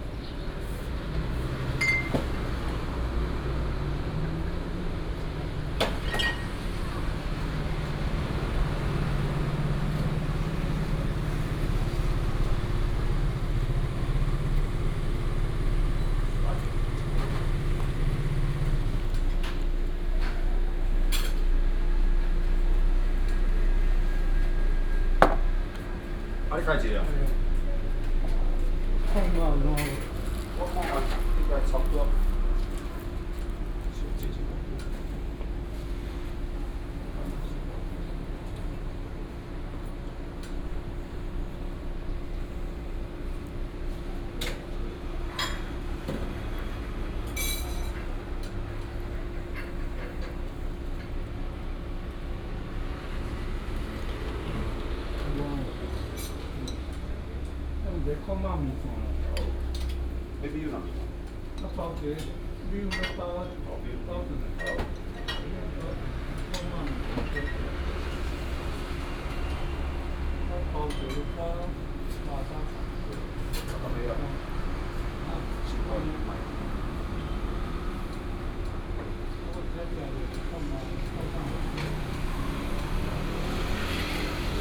Beixing St., West Dist., Chiayi City - Lamb noodle shop
in the Lamb noodle shop, Traffic sound
Chiayi City, Taiwan, 18 April, ~12:00